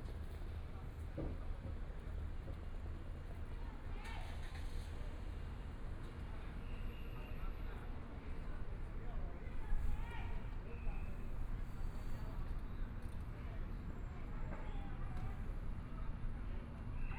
Taibao City, Chiayi County - The square outside the station
The square outside the station, Traffic Sound, Binaural recordings, Zoom H4n+ Soundman OKM II
Taibao City, Chiayi County, Taiwan, 1 February 2014